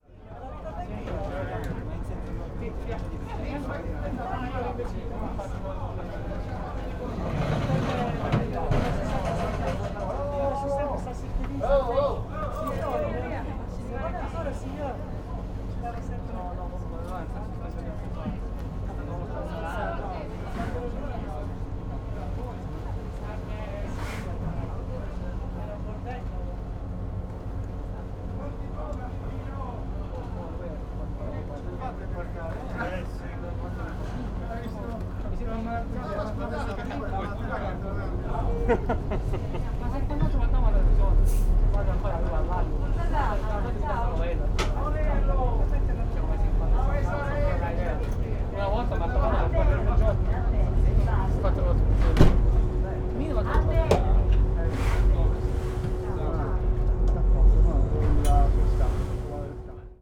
stromboli, aliscafo to salina - passengers on board
sound on board of the aliscafo ferry from stromboli island to salina, panarea, lipari, volcano, milazzo
22 October 2009, 16:00